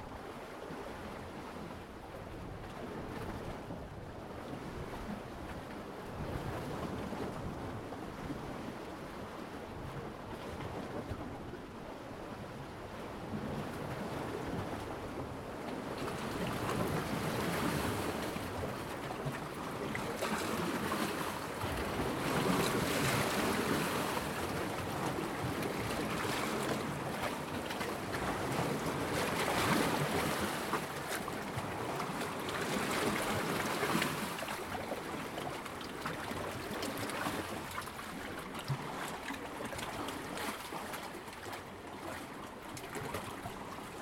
Port Dalhousie East Pier terminus, St. Catharines, ON, Canada - East Pier
The sound of Lake Ontario at the newly-rebuilt Port Dalhousie East Pier (St. Catharines, ON). First we hear the Zoom H2n on the surface of the pier, then amid the rock berm below the pier surface but above the water, then we hear the Aquarian Audio H2a hydrophone about a half meter underwater at 2 close locations. The Port Dalhousie Piers, first constructed in the 1840s at the terminus of the second Welland Canal, extend the mouth of Port Dalhousie harbour on both the east and west banks. The piers were closed for safety reasons in 2015 and re-opened in June 2021.